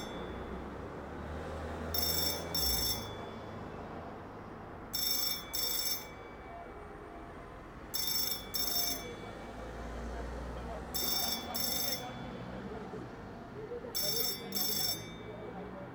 I noticed quite recently, while out walking, that when the telephone rings at this old salvage yard, an external bell on the outside of the building lets the proprietor know. Presumably this is so that if working outside, a phonecall won't be missed, but the texture of the sound like everything in the salvage yard has an amazingly vintage feel to it, as though it is ringing to us from another time in history. You can also still hear from this distance some reflections off the walls and buildings of the beautiful bells of St. Giles and the Thursday evening practice of the bell-ringers.